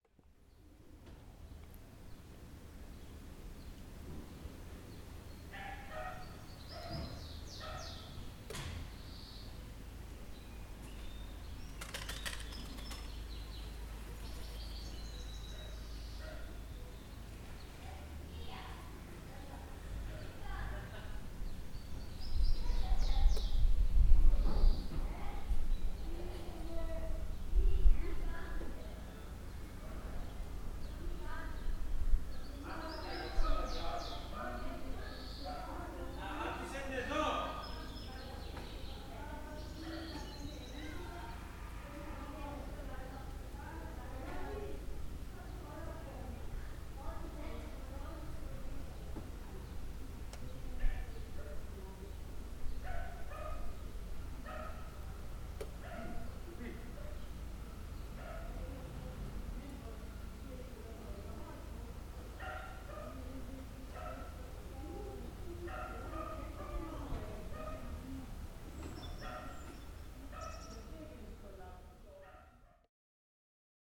Pantokratora, Corfu, Greece - Pantokratora Square - Πλατεία Παντοκράτωρα
Birds tweeting. A dog is barking. People chatting in the background.